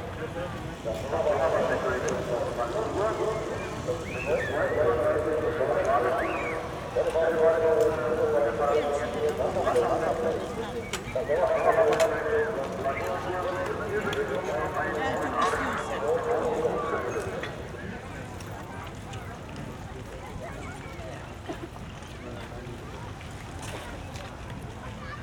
Tempelhofer Feld, Berlin, Deutschland - entrance Oderstr., ambience
entrance to the Tempelhof air field at Oderstraße. closing call of the nearby public pool, people entering and leaving the area, ambience.
(SD702, Audio Technica BP4025)
Berlin, Germany, 21 August 2012, 19:30